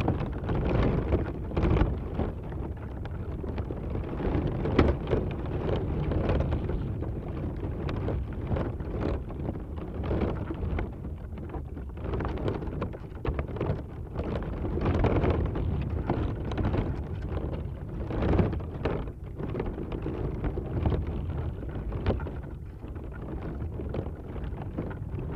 Vyžuonos, Lithuania, dried creeper plant
Contact microphone on hanging dried creeper plant
Utenos apskritis, Lietuva, 13 February 2022